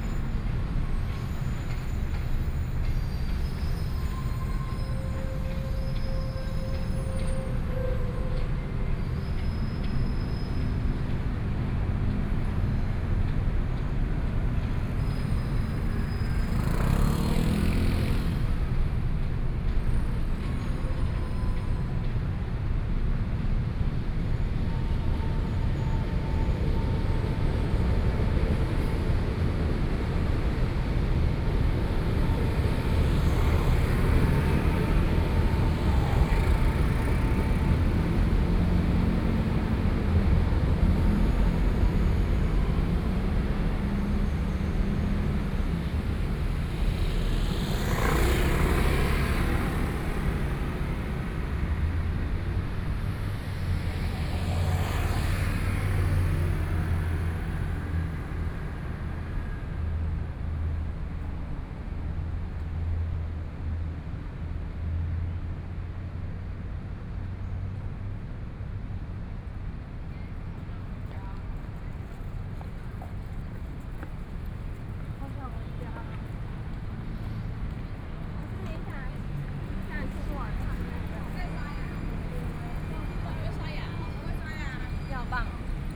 Hsinchu Station - Traffic noise
Train traveling through, From the underpass towards the oppositeSony, PCM D50 + Soundman OKM II
Hsinchu City, Taiwan, 24 September 2013